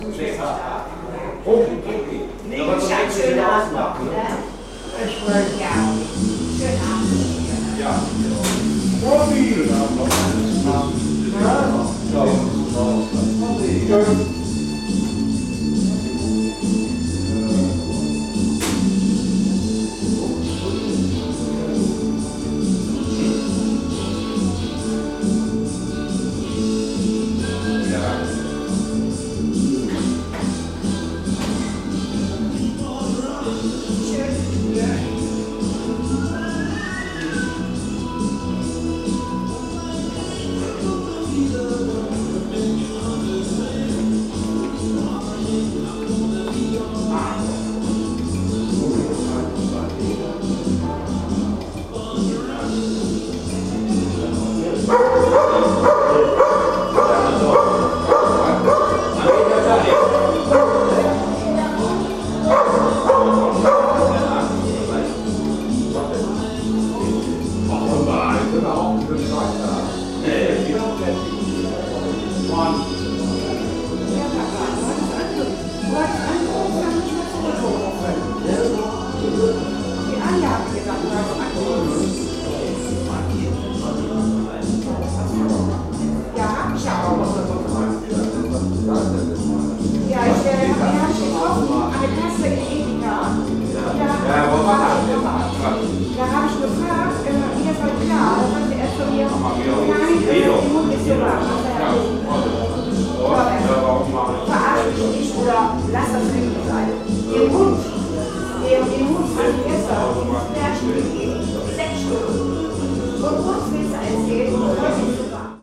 Wuppertal-Elberfeld, Deutschland - Kaiserwagen
Gaststätte Kaiserwagen, Alte Freiheit 24a, 42103 Wuppertal
Wuppertal, Germany